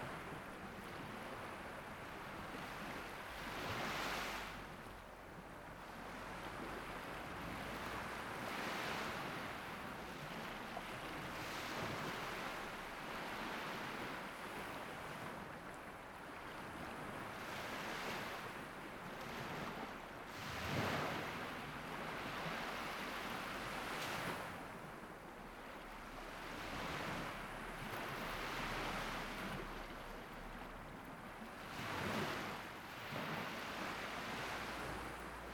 Leporano Marina Taranto, Italy - Calm sea, Winter.
Calm sea, winter.
Edirol R26
XY+Omni
Windscreen